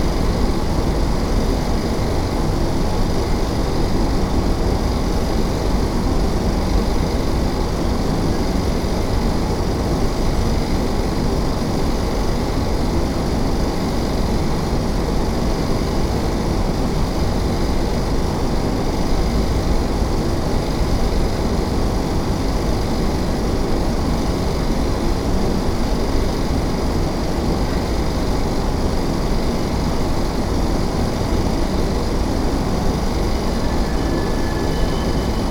Poznan, Solacki Park, in the back of restaurant - cooling
recorded in the back of a restaurant, near the delivery ramp and staff entrance. you can hear staff laughs and conversations through the buzz of AC units. (roland r-07)